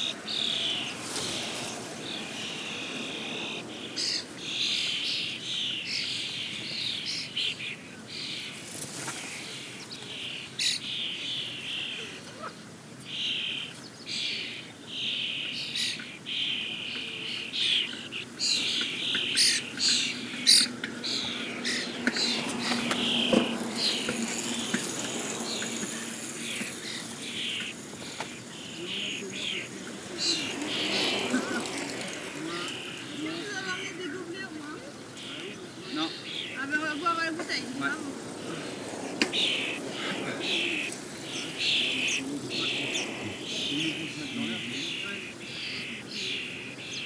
La Couarde-sur-Mer, France - Common Starlings
A big Common Starling colony, singing in the marshland. A lot and a lot of wind in the grass. And also, a very consequent mass of tourists cycling.